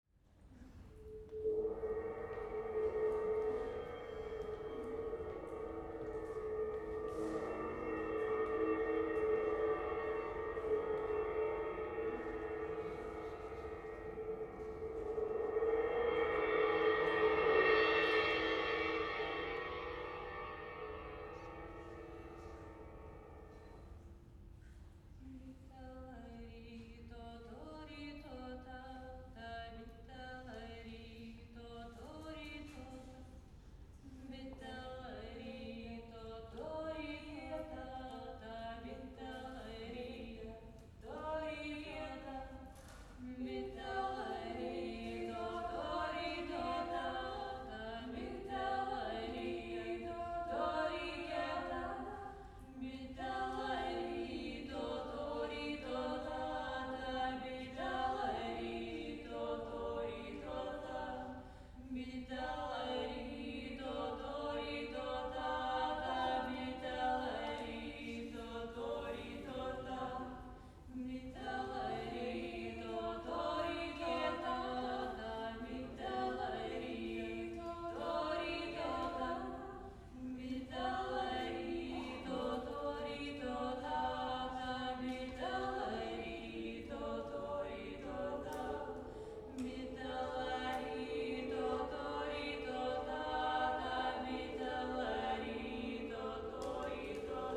Lithuania, Kulionys post-folklore band Sen Svaja
post-folklore band Sen Svaja performing at the 30 m height astronomy tower